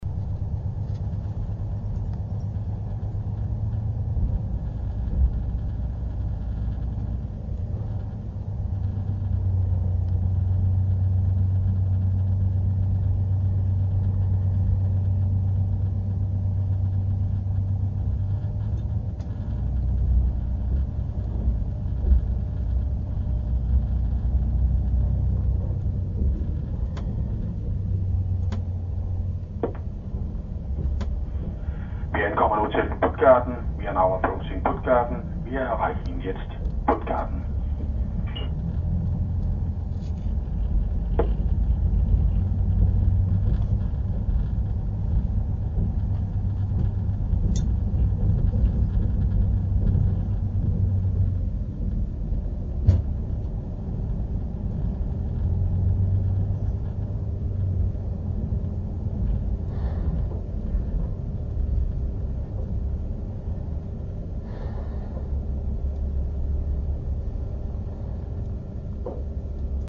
train approaching Puttgarden main station (there is only one).
as the train rolls off from the ferry, the motor roars, the rails ratter, and the conductor proves to be multilingual...